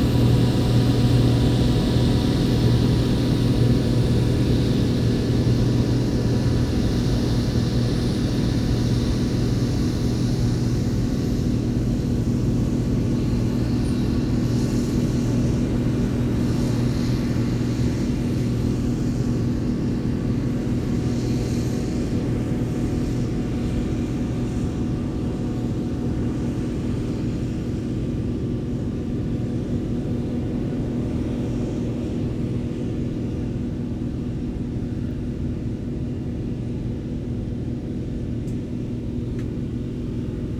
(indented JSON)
{
  "title": "Green Ln, Malton, UK - Combine harvesting ...",
  "date": "2017-08-21 12:00:00",
  "description": "Combine harvesting ... plus the movement of tractors and trailers ... open lavalier mics clipped to sandwich box ...",
  "latitude": "54.13",
  "longitude": "-0.55",
  "altitude": "81",
  "timezone": "Europe/London"
}